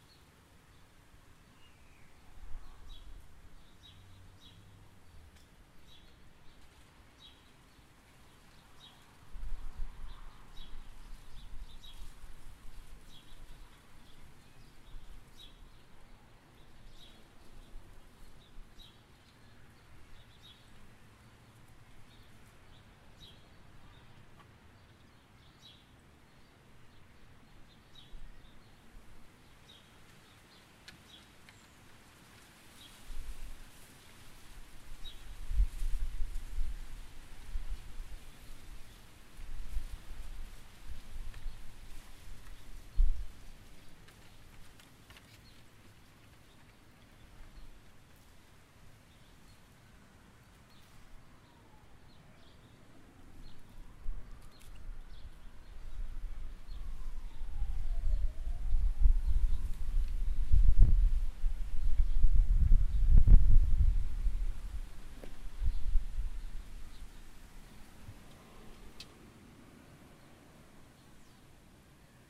Hakanoa St, Grey Lynn, Auckland
backyard, birds, sirens, wind.
Grey Lynn, Auckland, New Zealand